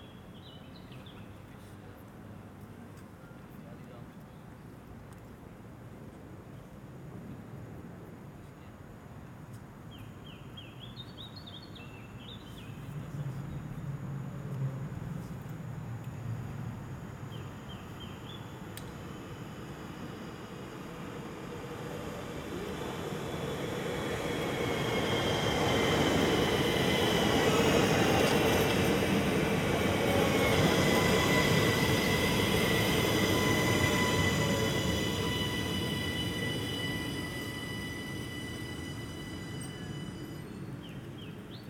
{
  "title": "Gare d'Etterbeek, Ixelles, Belgique - Etterbeek station ambience",
  "date": "2022-05-04 10:20:00",
  "description": "Trains passing by, a few birds, voice annoucement.\nTech Note : Ambeo Smart Headset binaural → iPhone, listen with headphones.",
  "latitude": "50.82",
  "longitude": "4.39",
  "altitude": "82",
  "timezone": "Europe/Brussels"
}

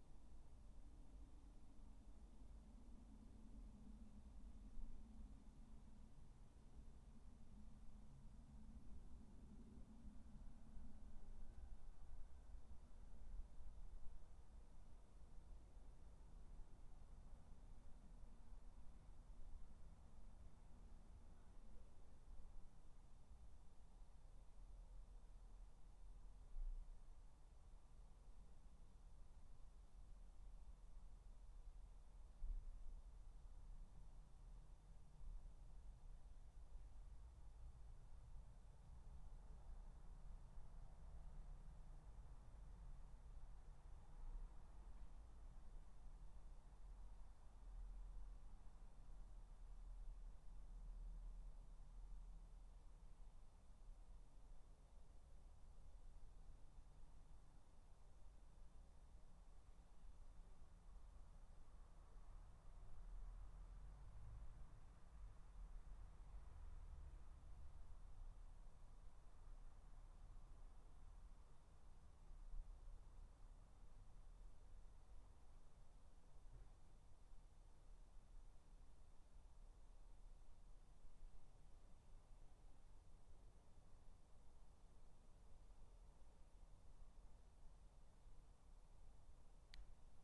{
  "title": "Dorridge, West Midlands, UK - Garden 16",
  "date": "2013-08-13 18:00:00",
  "description": "3 minute recording of my back garden recorded on a Yamaha Pocketrak",
  "latitude": "52.38",
  "longitude": "-1.76",
  "altitude": "129",
  "timezone": "Europe/London"
}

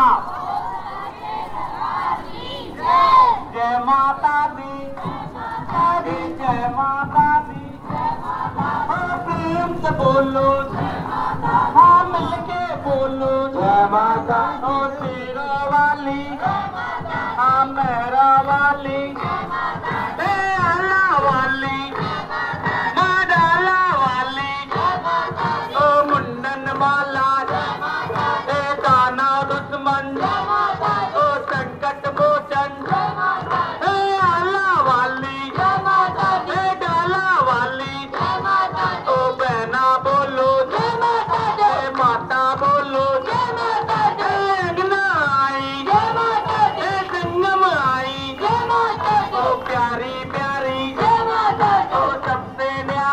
{
  "title": "Pipariya, Madhya Pradesh, Inde - Durga festival",
  "date": "2015-10-10 22:47:00",
  "description": "The whole city celebrate the Goddess Durga. Music, plays, fair, circus, market, food, and crowds of Piparya.",
  "latitude": "22.76",
  "longitude": "78.36",
  "altitude": "339",
  "timezone": "Asia/Kolkata"
}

